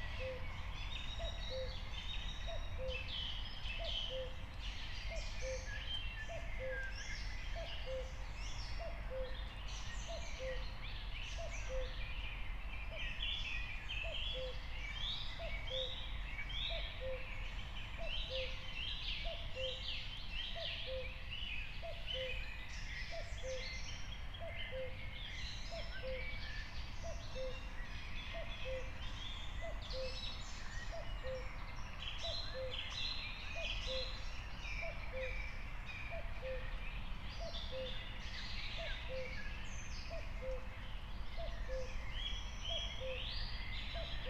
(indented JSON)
{
  "date": "2021-06-14 03:45:00",
  "description": "03:45 Berlin, Wuhletal - wetland / forest ambience",
  "latitude": "52.52",
  "longitude": "13.58",
  "altitude": "43",
  "timezone": "Europe/Berlin"
}